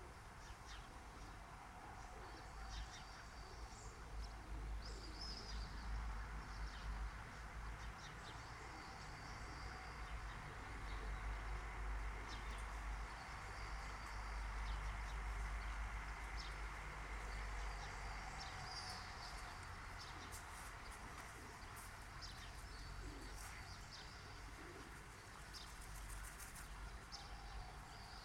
Night Birds - Parque Vale do Silêncio, R. Cidade de Negage 193, 1800 Lisboa, Portugal - Night Birds - Parque Vale do Silêncio
Night birds in urban surrounding. Recorded with SD mixpre6 and a pair of 172 primo clippys (omni mics) in AB stereo setup.